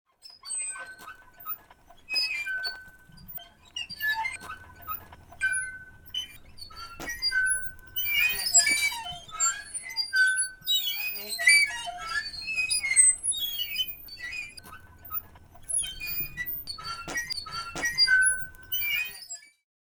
Kostrena, Croatia - swings
old swings by the beach - Nagra Ares-M
10 April 2011